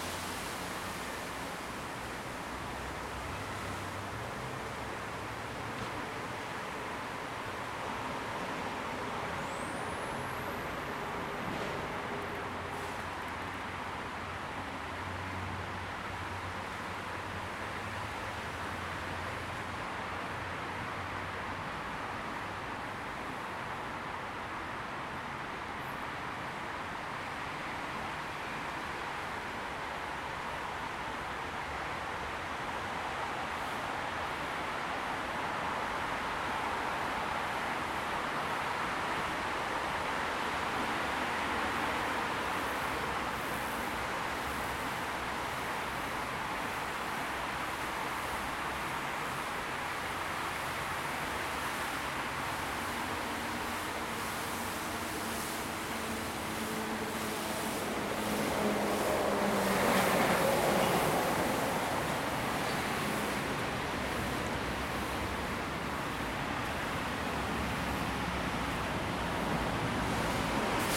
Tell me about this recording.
Soundwalk from one museum (HdG) to the other museum beneath (Staatsgalerie) and back.